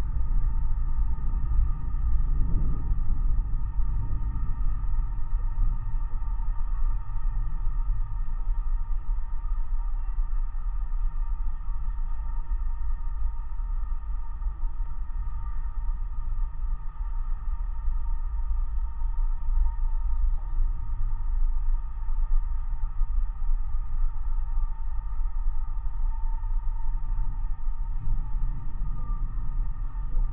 contact microphones on wire supporting some antenna tower standing in restricted military area
Klaipėda, Lithuania, 22 October